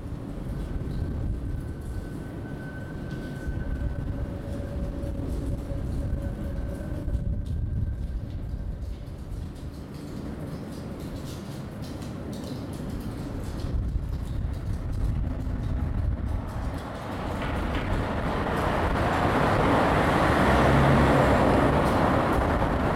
Rivierenbuurt-Zuid, L' Aia, Paesi Bassi - Windy tunnel under trains and next to cars
I was under the bridge in a very windy day. It seems like two trains above and a few cars next to me came by. I used my Zoom H2n without wind protection. The microphone was set pretty close to the ceiling on a column.